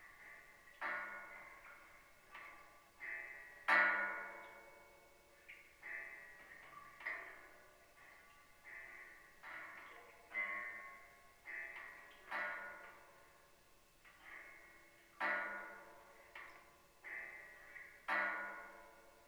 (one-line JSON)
{"date": "2021-10-04 23:30:00", "description": "Grill covered drain...light autumn rain...", "latitude": "37.85", "longitude": "127.75", "altitude": "125", "timezone": "Asia/Seoul"}